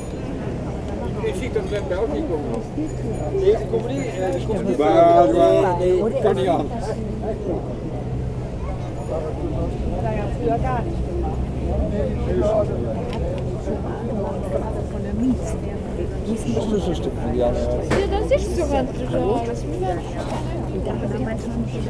Maastricht, Pays-Bas - Local market
On the main square of Maastricht, there's a local market, essentially with food trucks. Discreet people buy meal in a quiet ambiance.
Maastricht, Netherlands